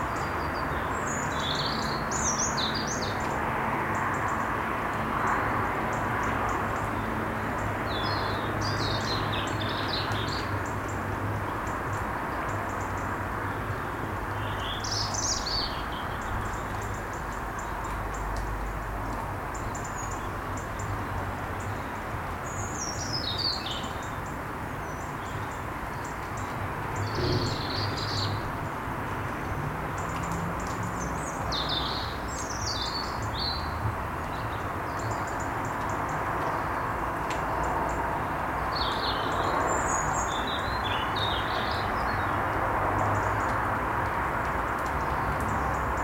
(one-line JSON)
{"title": "Karatza, Papagos, Greece - birds in the morining after a rainy day", "date": "2021-10-15 06:48:00", "description": "backyard of house, looking to Ymittos mountain and Attiki highway, recorded with Zoom h4n.", "latitude": "37.98", "longitude": "23.80", "altitude": "265", "timezone": "Europe/Athens"}